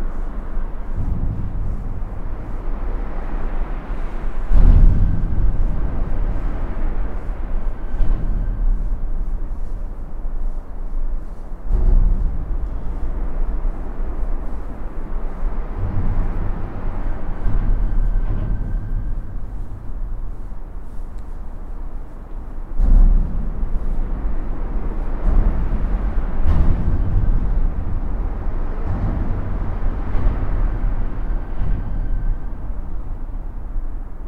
Hafenviertel, Linz, Österreich - unter der autobahnbrücke
unter der autobahnbrücke, linz
Linz, Austria